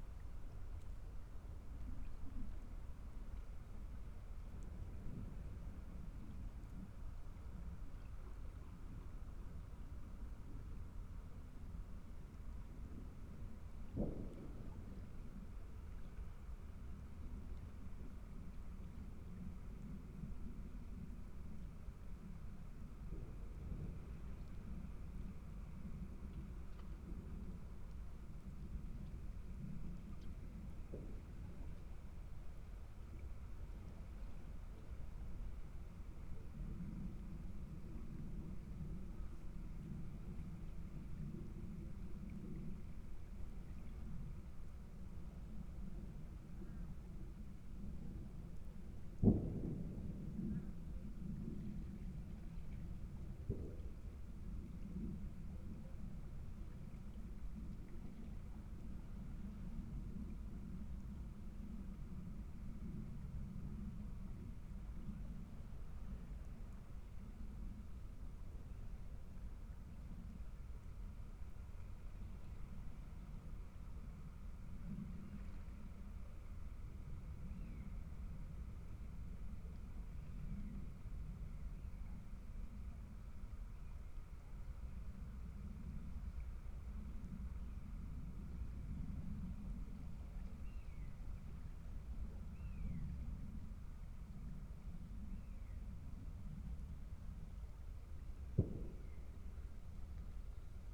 neurüdnitz, bienenwerder, oderaue: river bank - the city, the country & me: ambience at the oder river
ambience at the oder river on new year's day nearby an abandoned railway bridge
the city, the country & me: january 1, 2015